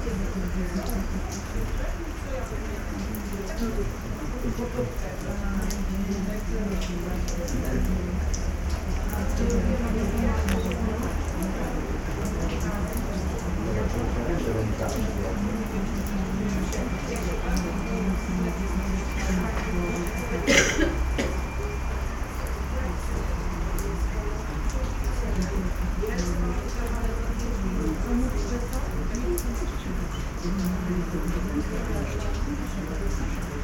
Thorn House at Park Słotwiński, Krynica-Zdrój, Polska - (654 BI) talks at thorn house
Binaural recording of talks in a round thorn house / graduation tower in Park Slotwiński.
Recorded with DPA 4560 on Sound Devices MixPre6 II.
województwo małopolskie, Polska, 26 July 2020